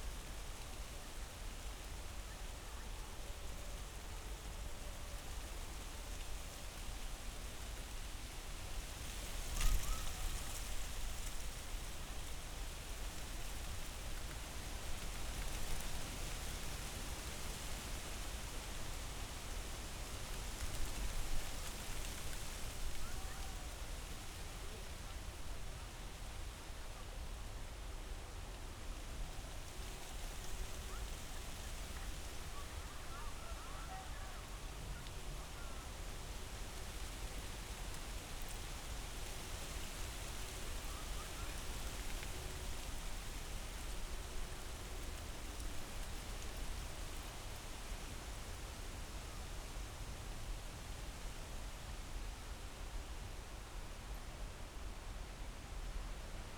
Tempelhofer Feld, Berlin, Deutschland - mid autumn, light wind
place revisited, end of October. Crows start to gather in the hundreds (can't be heard in this recording...)
(Sony PCM D50, DPA4060)
Berlin, Germany, 27 October 2018